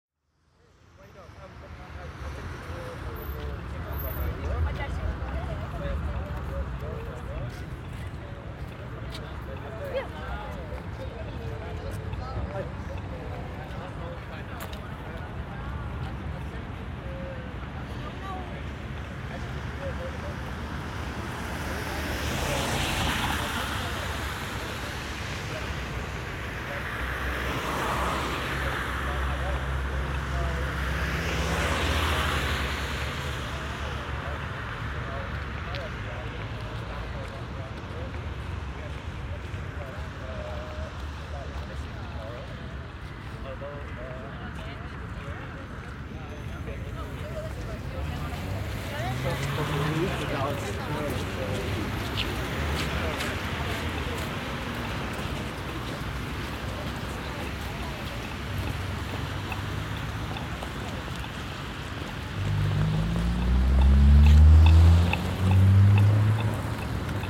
crossing the street with GPS phone, Aporee workshop
radio aporee sound tracks workshop GPS positioning walk part 8
Berlin, Germany, 1 February 2010